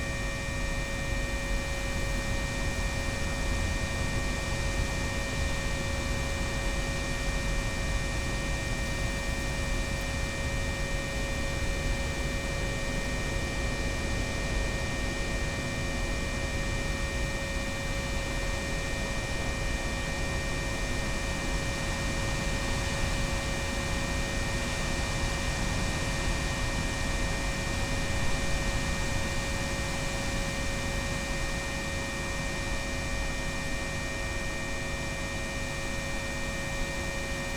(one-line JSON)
{"title": "Utenos sen., Lithuania, electric meadow", "date": "2016-06-21 14:30:00", "description": "4 tracks recording in the meadow under two high voltage lines. recorded in windy day with small microphones hidden in grass and electronic listening device Electrosluch 3.", "latitude": "55.49", "longitude": "25.67", "altitude": "153", "timezone": "Europe/Vilnius"}